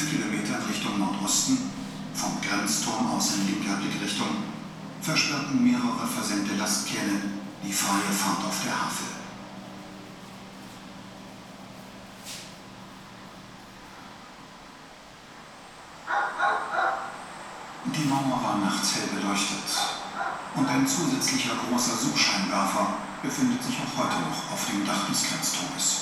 berlin wall of sound-havel grenzturm. j.dickens. 160909